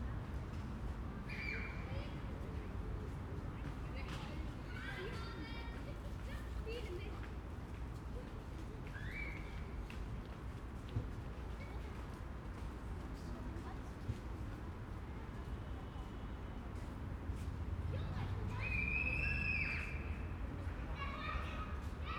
Beside the playground, Jacobystraße, Berlin, Germany - Beside the playground and the parked cars
Residential apartment blocks start very close to the concrete expanse of Alexanderplatz and the huge roads of the area. Once inside surrounded by the multi-storey buildings it is a different, much quieter, world, of car parks, green areas, trees and playgrounds. The city is very present at a distance. Sirens frequently pass, shifting their pitch at speed. But there is time for the children, rustling leaves and footsteps, even an occasional crow or sparrow.
Deutschland, 9 September 2021, ~17:00